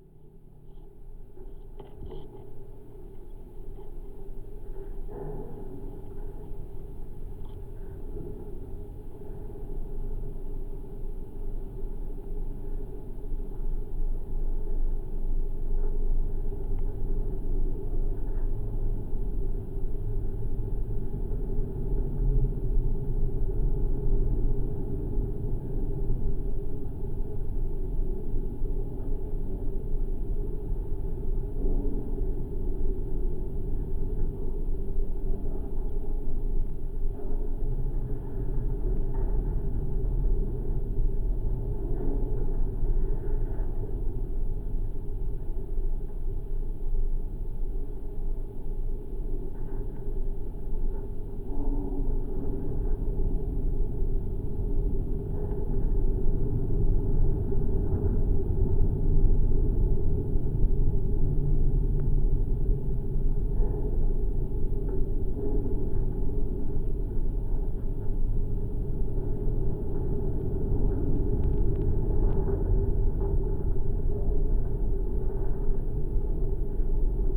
Senheida, Latvia, abandoned watertower
Abandoned metallic watertower. Recorded with geophone